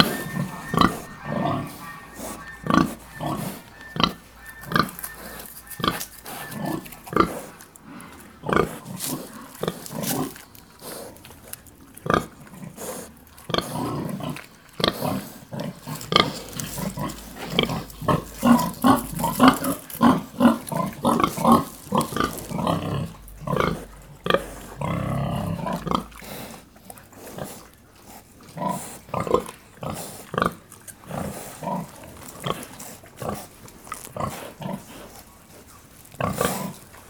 Via 1° Maggio, Bernate VA, Italia - Un maiale nero con i suoi sei maialini in atto di allattamento